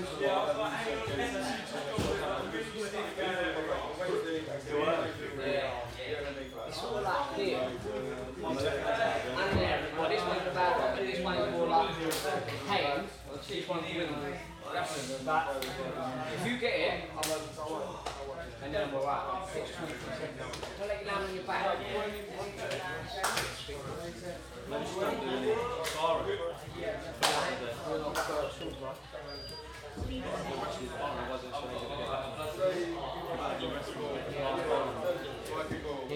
London Borough of Islington, Greater London, Vereinigtes Königreich - The MMA Clinic, St. Alban's Place 29-30 - Combat training
The MMA Clinic, St. Alban's Place 29-30 - Combat training. Several men grappling on the ground, gasping, trainer's commands, then a break and goodbyes.
[Hi-MD-recorder Sony MZ-NH900 with external microphone Beyerdynamic MCE 82]
United Kingdom, European Union